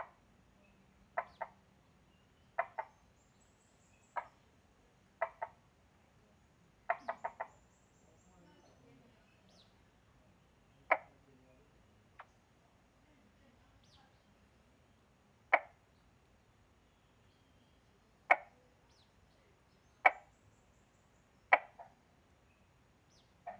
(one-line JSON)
{"title": "紅瓦厝山居民宿, Puli Township - Frogs chirping", "date": "2016-04-27 17:18:00", "description": "Frogs chirping, Bird sounds, Ecological pool\nZoom H2n MS+ XY", "latitude": "23.95", "longitude": "120.90", "altitude": "539", "timezone": "Asia/Taipei"}